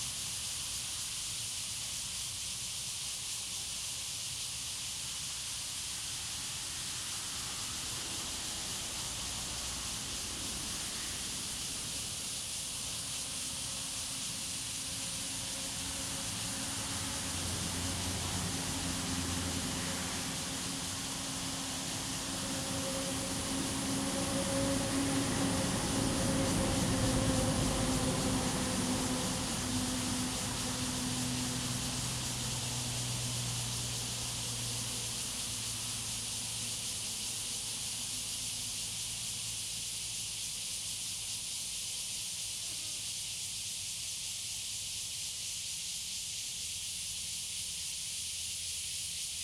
瑞豐村, Luye Township - Cicadas sound
Cicadas sound, Birdsong, Traffic Sound, In the woods
Zoom H2n MS+ XY
Luye Township, Taitung County, Taiwan, 7 September